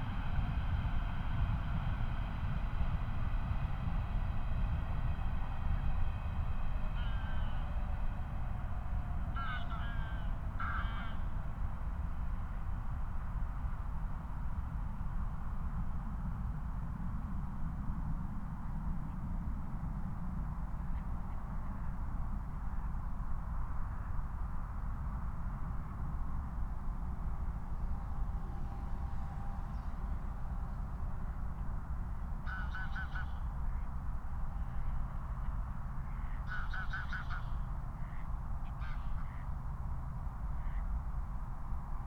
Moorlinse, Berlin Buch - near the pond, ambience
06:19 Moorlinse, Berlin Buch
Deutschland